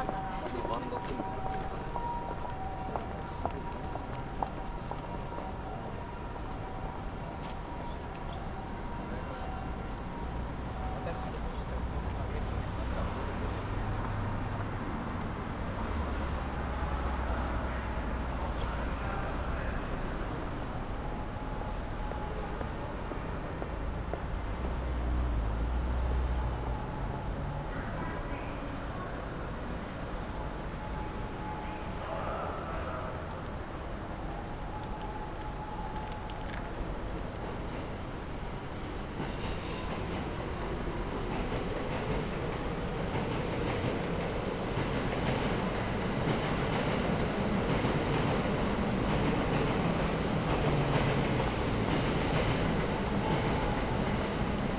{"title": "Tokyo, Bunkyō, bridge - full moon contemplation", "date": "2013-04-28 22:06:00", "description": "a few people standing on the bridge, looking a big, full moon on the horizon. busy district, many people walking in all directions, probably because it's close to the Tokyo university. trains arriving at the nearby Ochanomizu station. echoes bouncing off the tall buildings around.", "latitude": "35.70", "longitude": "139.76", "altitude": "18", "timezone": "Asia/Tokyo"}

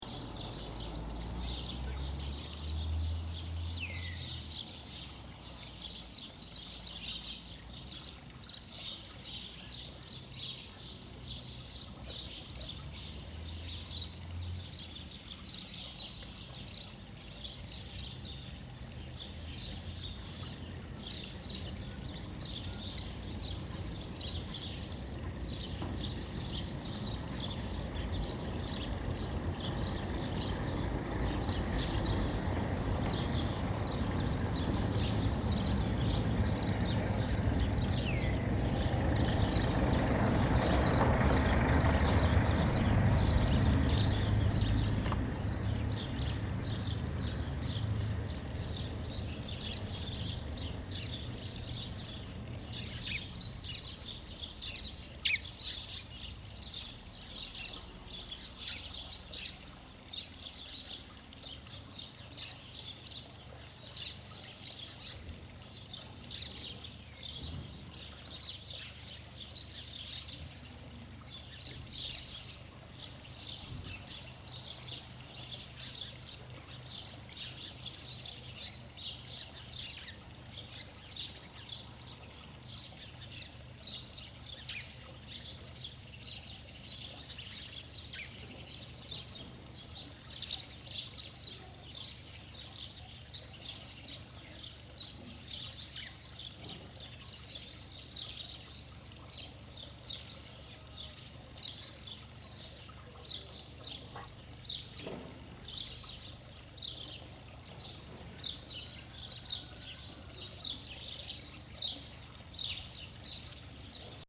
{"title": "Largo da Igreja, Aldeia de Bruçó, Portugal", "date": "2014-02-19 11:00:00", "description": "Aldeia de Bruçó, Portugal. Mapa Sonoro do rio Douro. Bruçó, Portugal. Douro River Sound Map", "latitude": "41.24", "longitude": "-6.68", "altitude": "678", "timezone": "Europe/Lisbon"}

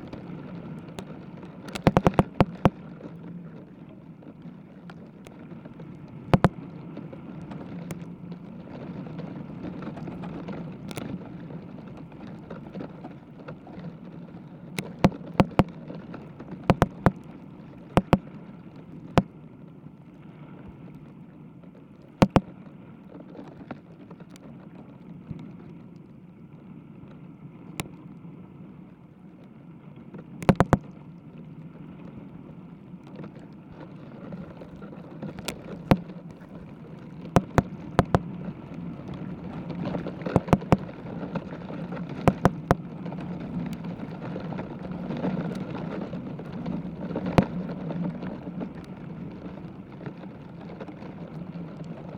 {"title": "Urbanização Vila de Alva, Cantanhede, Portugal - A young eucalyptus tree bending with the wind", "date": "2022-04-19 14:59:00", "description": "Sound of a young eucalyptus tree bending with the wind.\nWith a contact mic.", "latitude": "40.33", "longitude": "-8.60", "altitude": "76", "timezone": "Europe/Lisbon"}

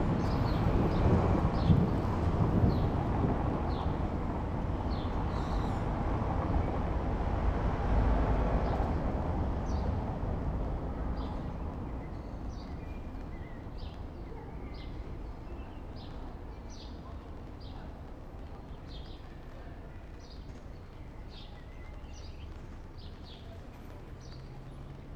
{"title": "berlin, friedelstraße: vor griechischem restaurant - the city, the country & me: in front of a greek restaurant", "date": "2011-06-06 16:21:00", "description": "in front of the greek restaurant \"taverna odysseus\", pedestrians, traffic noise and a upcoming thunderstorm\nthe city, the country & me: june 6, 2011\n99 facets of rain", "latitude": "52.49", "longitude": "13.43", "altitude": "47", "timezone": "Europe/Berlin"}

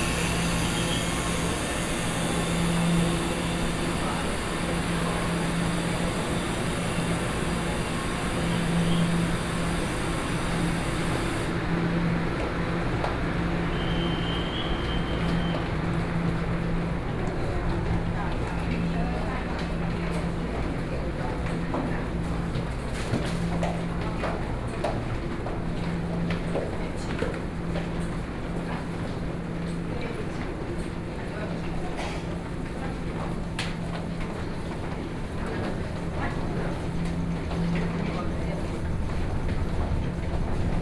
{"title": "Taoyuan Station, Taiwan - Soundwalk", "date": "2013-09-11 07:26:00", "description": "After getting off from the platform go through the underpass railway station, Sony PCM D50 + Soundman OKM II", "latitude": "24.99", "longitude": "121.31", "altitude": "102", "timezone": "Asia/Taipei"}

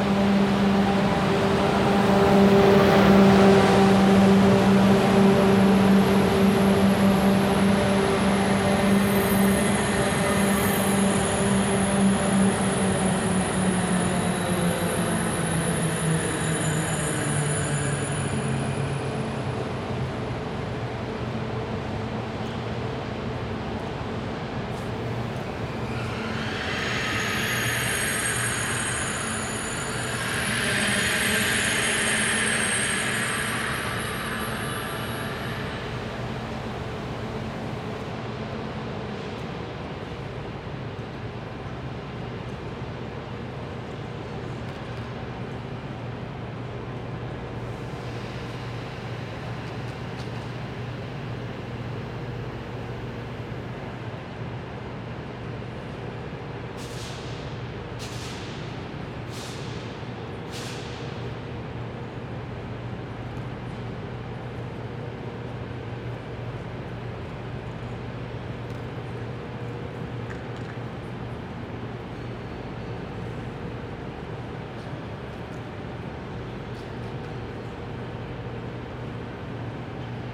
{"title": "London Borough of Camden, Greater London, Vereinigtes Königreich - St Pancras International - EuroStar arrival, main hall ambience", "date": "2013-02-14 11:59:00", "description": "St Pancras International - EuroStar arrival, main hall ambience. A train arrives, brakes squeak, reverb, announcements.\n[Hi-MD-recorder Sony MZ-NH900 with external microphone Beyerdynamic MCE 82]", "latitude": "51.53", "longitude": "-0.13", "altitude": "32", "timezone": "Europe/London"}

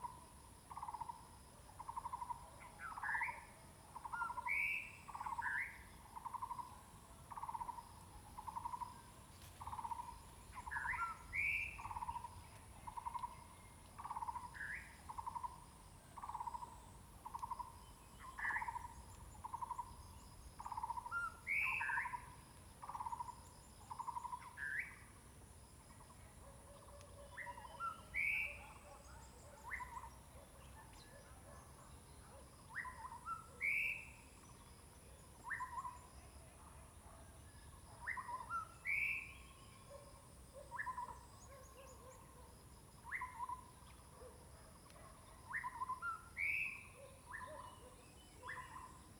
{"title": "Hualong Ln., Yuchi Township - Birds singing", "date": "2016-05-04 08:01:00", "description": "Bird sounds, Dogs barking\nZoom H2n MS+XY", "latitude": "23.93", "longitude": "120.90", "altitude": "773", "timezone": "Asia/Taipei"}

